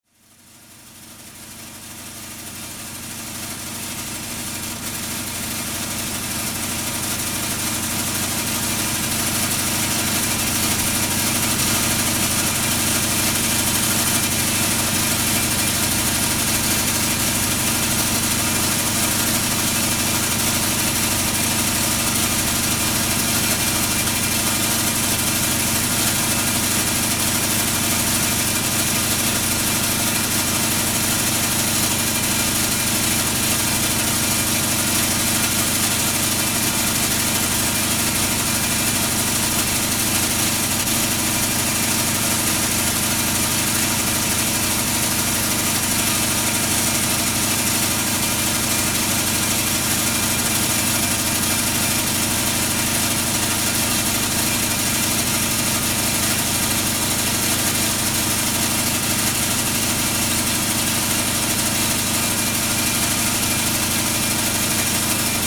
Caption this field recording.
This is the biggest dump of Belgium. We are here in the factory producing electricity with the gas. This is the sound of a turbine.